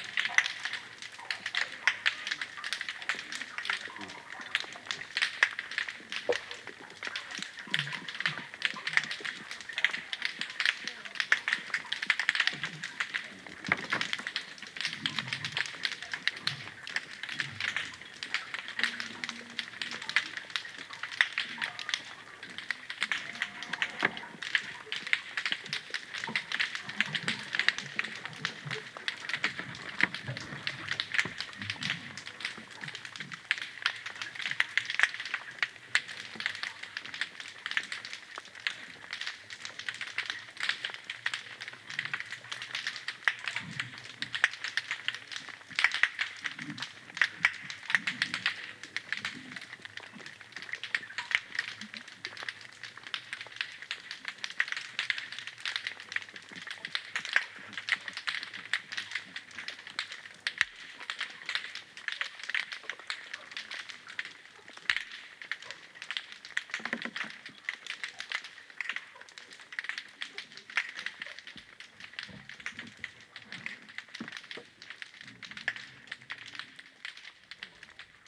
Loch Moidart - Alpheidae (pistol shrimp) Before a Storm
Recorded with an Aquarian Audio h2a hydrophone and a Sound Devices MixPre-3 (mono)
UK